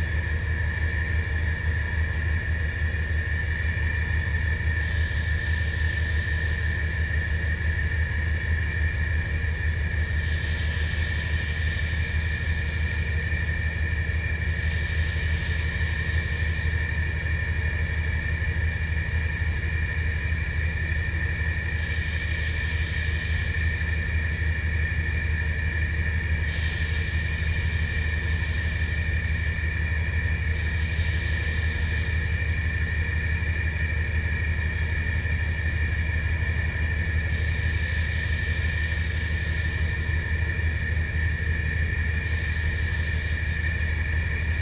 {"title": "Bayonne, NJ", "date": "2012-01-14 14:25:00", "description": "steam outlet from factory", "latitude": "40.65", "longitude": "-74.10", "altitude": "2", "timezone": "America/New_York"}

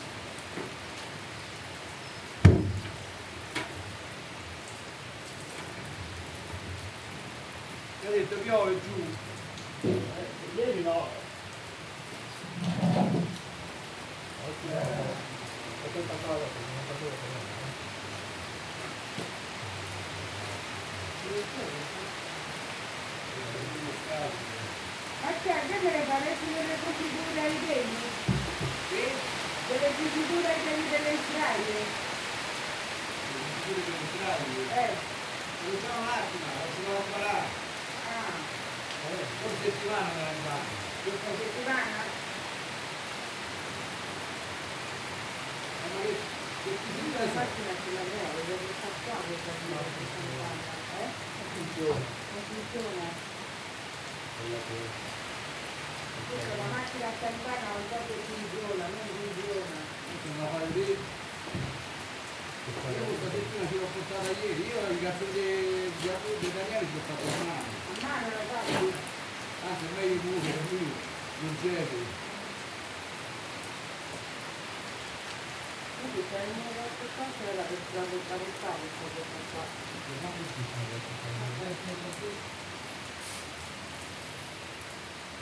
{"title": "Tivoli, Colle Castello, Summer Storm", "date": "2011-09-15 14:56:00", "description": "Un temporale passeggero, Fiorella, Franco e Marcello...\nSummer storm, Fiorella, Franco and Marcello...", "latitude": "41.95", "longitude": "12.84", "altitude": "286", "timezone": "Europe/Rome"}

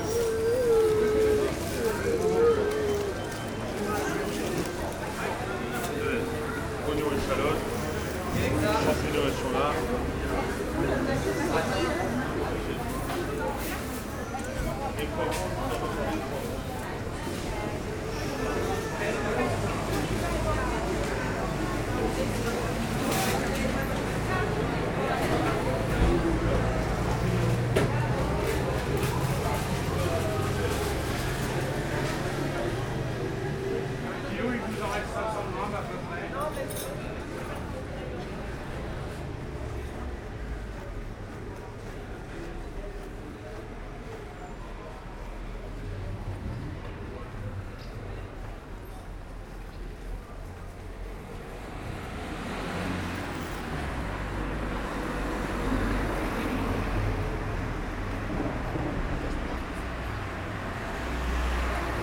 {"title": "Maintenon, France - Market day", "date": "2016-07-28 11:00:00", "description": "The market day in the small city of Maintenon. There's not a big activity, but it stays user-friendly.", "latitude": "48.59", "longitude": "1.58", "altitude": "103", "timezone": "Europe/Paris"}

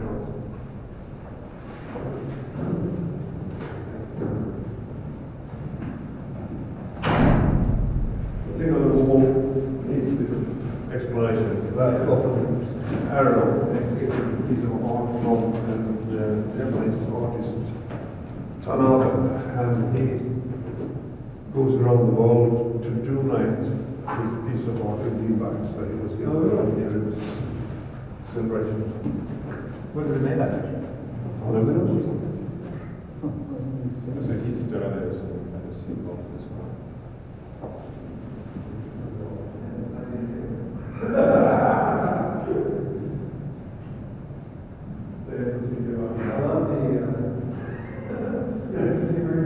{"title": "Svalbard vault - transverse tunnel vault doors closes", "date": "2011-02-27 11:12:00", "description": "Doors to all three seed vaults closing and opening in the transverse tunnel at the end of the tunnel complex.", "latitude": "78.23", "longitude": "15.49", "altitude": "50", "timezone": "Arctic/Longyearbyen"}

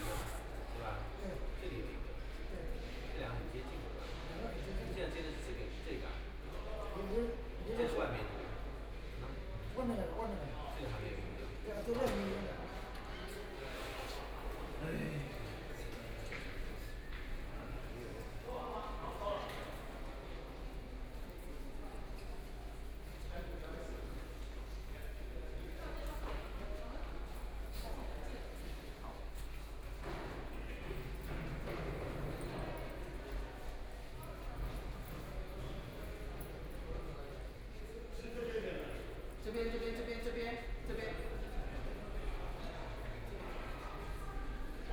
{"title": "Power Station of Art, Shanghai - Voice conversations between staff", "date": "2013-12-01 16:51:00", "description": "Voice conversations between staff, Construction workers are arranged exhibition, the third floor, The museum exhibition is arranged, Binaural recording, Zoom H6+ Soundman OKM II (Power Station of Art 20131201-1)", "latitude": "31.20", "longitude": "121.49", "altitude": "16", "timezone": "Asia/Shanghai"}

